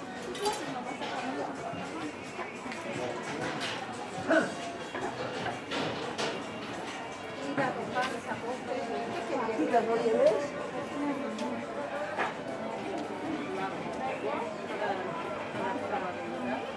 La Cancha, Cochabamba, Bolivia - La Cancha mercado / La Cancha market
La Cancha is a huge maze market in Cochabamba, and is notorious for pickpockets. This track was recorded discretely with 2 Rode Lavaliers stuck under either side of my shirt collar, going into a Zoom H4n I had strapped to my waist under my shirt.
I wasn't able to monitor while recording as this would have given the game away, so I'm pleased nothing clipped and there wasn't more clothing rustle.